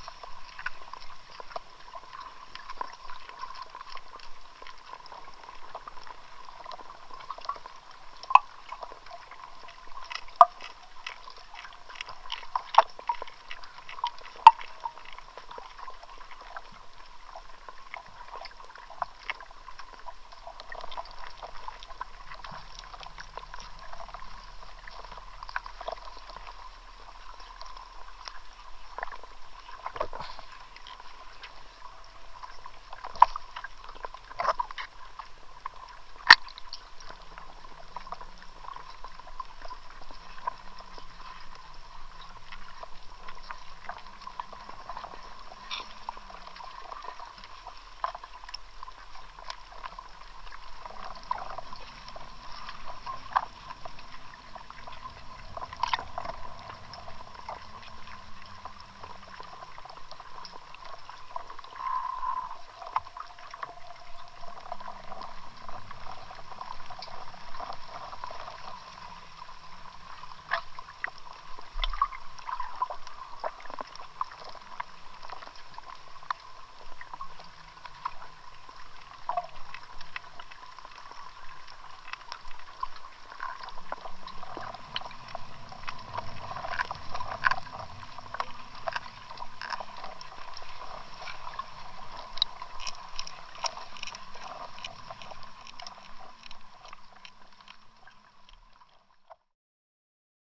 Underwater microphone in lake Baltys
Utenos apskritis, Lietuva, 7 May 2022